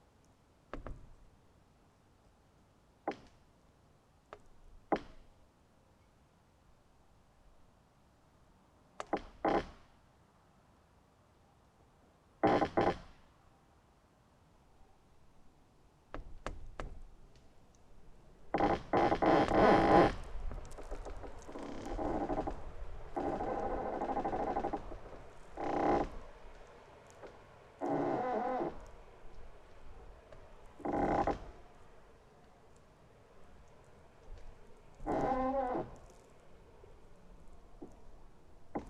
Lithuania, Utena, tree
singing trees in oakwood
2 December